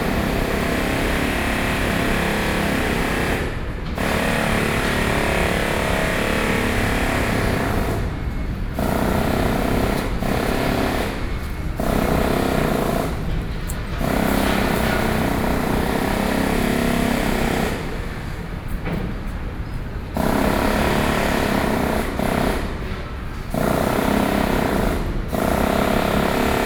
New Taipei City, Taiwan - The construction of a building site